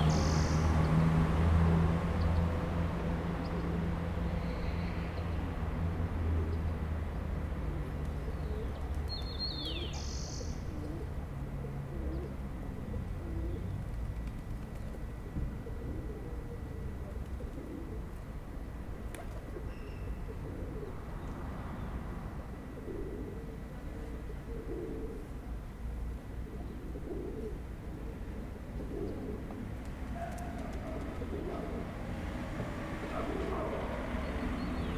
San Martin, Tacna, Peru - A man waiting
Crossing the border between Chile and Peru by night, arriving early in Tacna. Passing my time at the square in front of the church, recording the morning - a city waking up. A man talking and waiting.
7 January